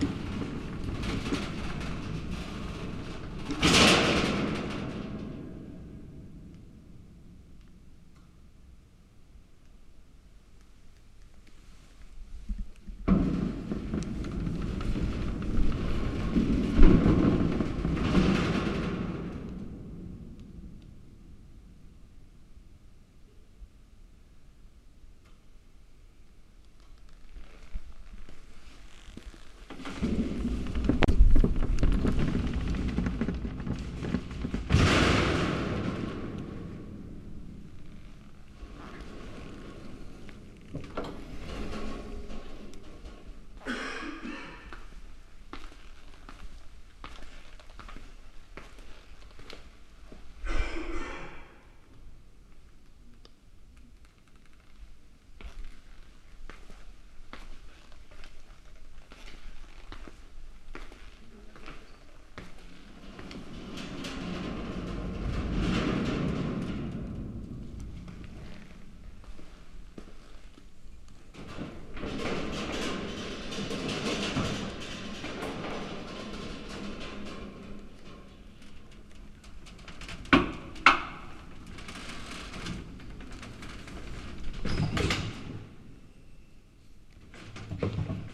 Stasi Archive, Halle-Neustadt
Stasi, archive, Halle-Neustadt, DDR, files, Background Listening Post
Halle, Germany, September 2010